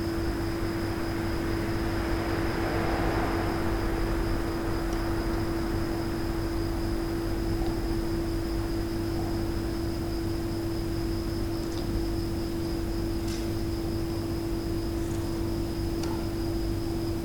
Plaça Mercat, La Pobla Llarga, Valencia, España - Un grillo campestre y animales nocturnos una noche de verano
Noche en La Pobla Llarga acompañada del sonido de un grillo. Los cantos de los grillos solo los hacen los machos y los producen frotando sus élitros (alas anteriores), por su sonido creo que es un grillo campestre [Gryllus Campestris]. Se capta también el sonido de un murciélago a partir del minuto 1:34 aproximadamente. También se escucha a un perrillo en una terraza que mueve algunos objetos que reverberan un poco en las paredes. Y como buena noche calurosa de verano... también se escuchan las tecnologías humanas; algún coche pasar y el motor de un aire acondicionado.
Animales nocturnos en un paisaje sonoro de pueblecito humano.